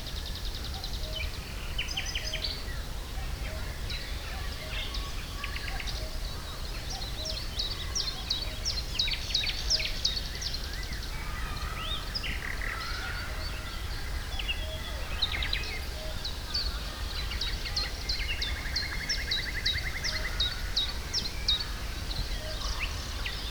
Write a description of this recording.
Binaural recording. A lot of different birds (like the Cuckoo), planes, children playing, the almost white noise of leaves in the wind. Zoom H2 recorder with SP-TFB-2 binaural microphones.